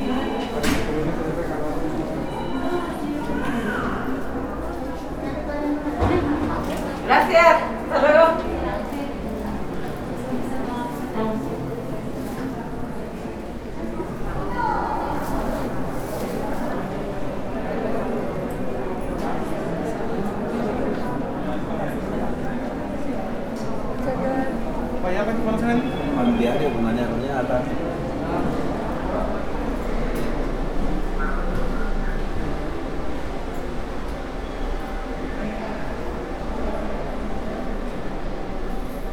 Benito Juárez, Centro, León, Gto., Mexico - Presidencia municipal. León, Guanajuato. México.
City Hall. Leon, Guanajuato. Mexico.
I made this recording on july 25th, 2022, at 1:56 p.m.
I used a Tascam DR-05X with its built-in microphones and a Tascam WS-11 windshield.
Original Recording:
Type: Stereo
Esta grabación la hice el 25 de julio 2022 a las 13:56 horas.